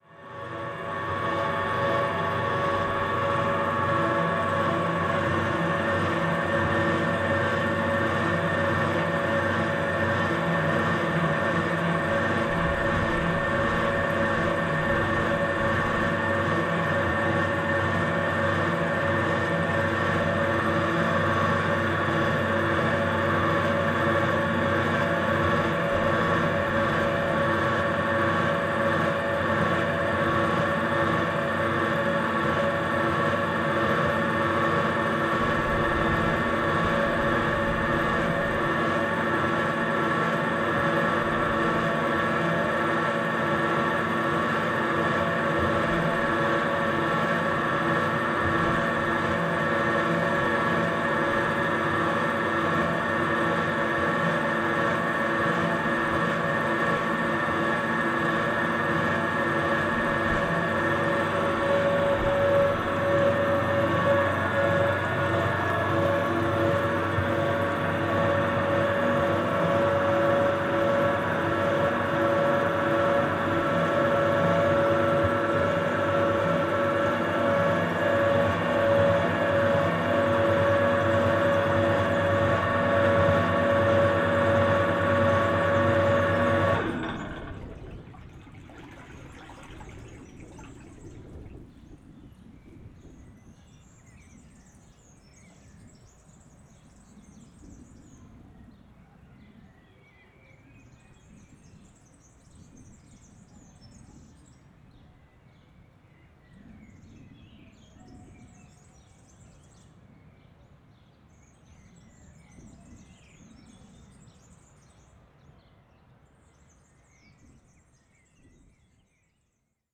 Mergenthalerring, Berlin, Deutschland - mobile concrete factory, pump
water pump at a mobile concrete factory, which was out of service because of a national holiday.
(SD702 AT BP4025)